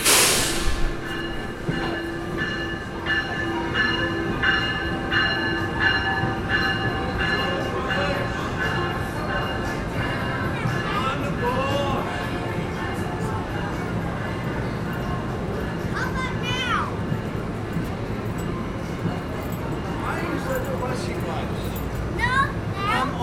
This recording was taken in the heart of the Trenton Transit Center on a balmy Friday evening.
NJ, USA, 18 October 2013